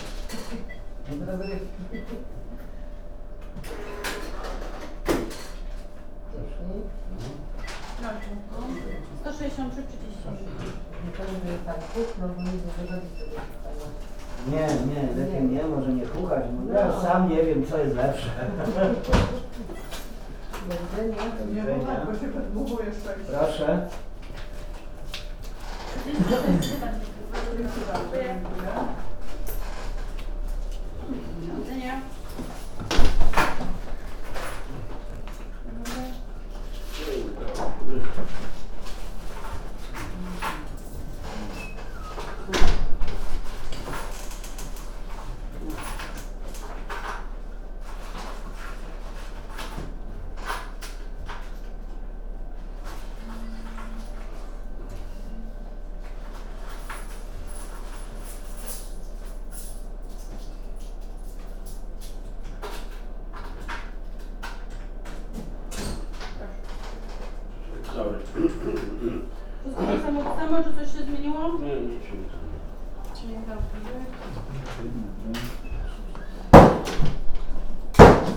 Jana III Sobieskiego housing complex - lottery point
ambience of a crowded lottery outlet. Retirees paying their bills and buying the lottery tickets. Stamp bang. Customers walking in and out, banging the door. Some joke with the clerk about the methods how to hit the jackpot. (roland r-07)